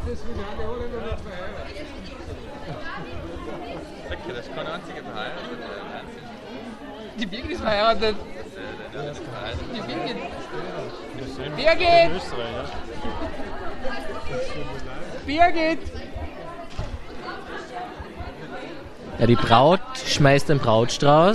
hallein, strautbrausswerfen
simone und david und alle
Hallein, Austria, 18 July